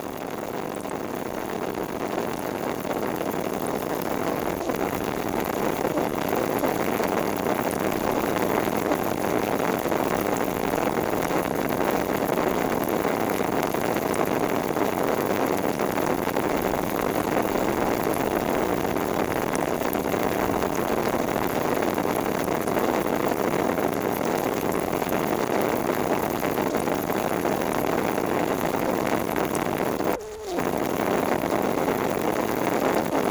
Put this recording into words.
This is the biggest dump of Belgium. There's a leakage in a biogas pipe.